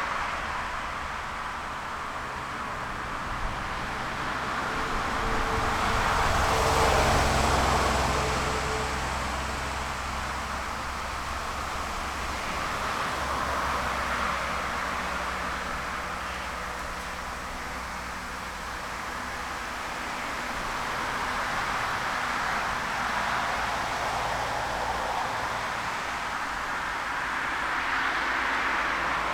berlin wall of sound-niederneunender allee. j.dickens.160909
Berlin, Germany